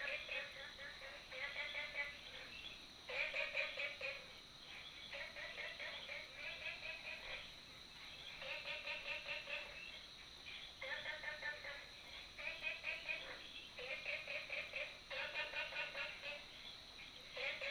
綠屋民宿, 桃米里 Nantou County - Frogs
Frogs sound, at the Hostel